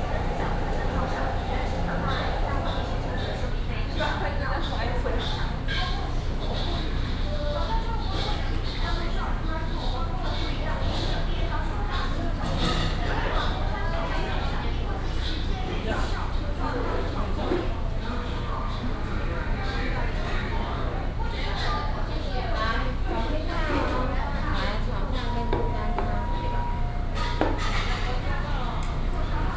Sec., Zhongshan N. Rd., Tamsui Dist. - Inside the restaurant
Inside the restaurant, The sound of cooking, TV news sound